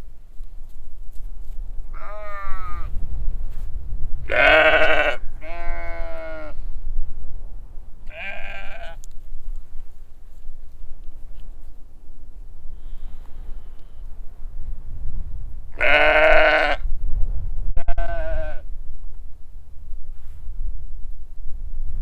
hupperdange, sheep pasture
On a windy hill. A big group of sheeps baaing to the stranger at the road.
Hupperdange, Schafweide
Auf einem windigen Hügel. Eine große Gruppe von Schafen blökt den Fremden auf der Straße an.
Hupperdange, pâture de moutons
Sur une colline dans le vent. Un grand troupeau de moutons bêlant à un étranger sur la route.
Heinerscheid, Luxembourg, 13 September 2011, 17:30